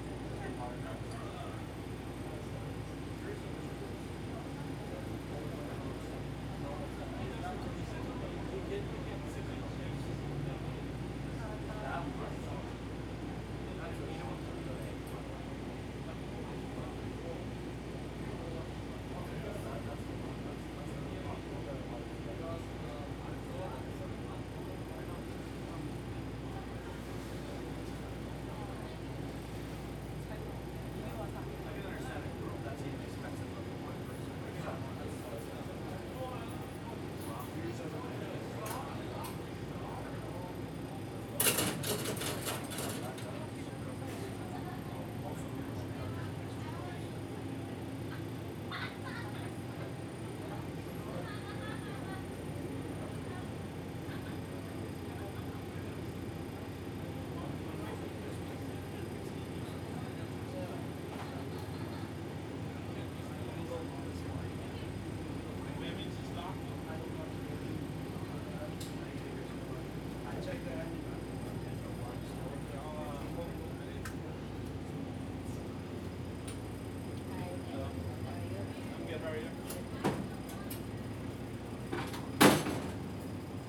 Toronto Division, ON, Canada - Ferry to Toronto Islands
Recorded on the Sam McBride ferry to Toronto Islands, leaving mainland ferry terminal and arriving at Centre Island terminal.